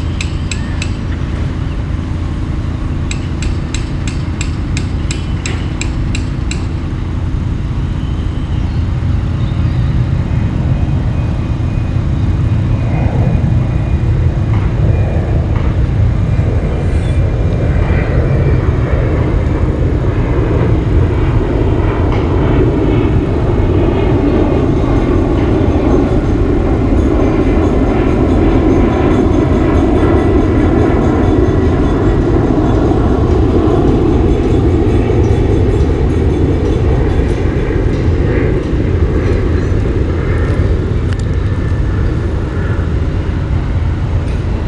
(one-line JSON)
{"title": "Oliphant St, Poplar, London, UK - RHG #1", "date": "2018-01-11 15:10:00", "description": "Recorded with a pair of DPA 4060s and a Marantz PMD661.", "latitude": "51.51", "longitude": "-0.01", "altitude": "4", "timezone": "Europe/London"}